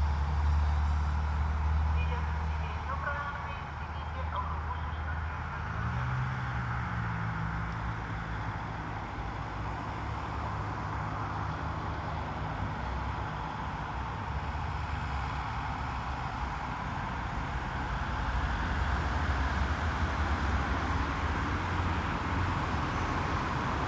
Anykščiai, Lithuania, noisy crossroads
traffic in crossroad at the bus station